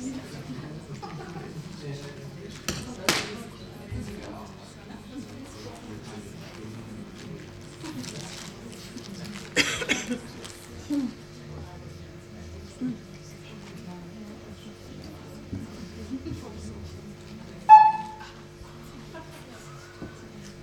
kino 813 - formen der fremdheit
26.01.2009 20:45 filmclub 813 cinema, disruption of the screening of an experimental movie called "formen der fremdheit" by karola schlegelmilch. silence, hum from the speakers, muttering in the audience.